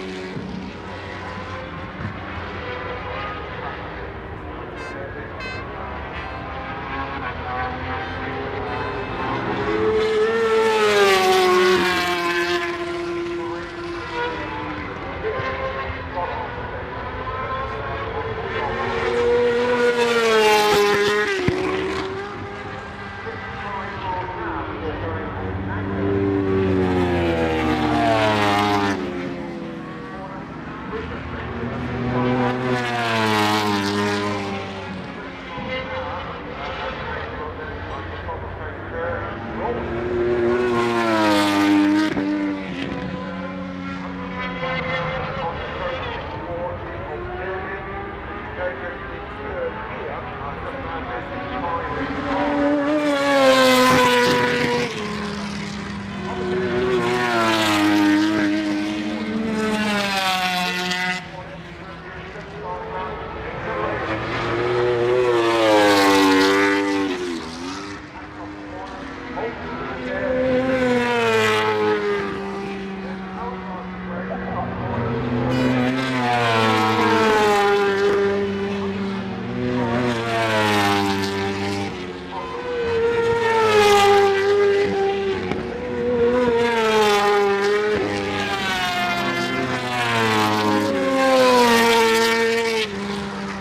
Warm up ... mixture of 990cc four strokes and 500cc two strokes ... Starkeys ... Donington Park ... warm up and associated noise ... Sony ECM 959 one point stereo mic ... to Sony Minidisk ...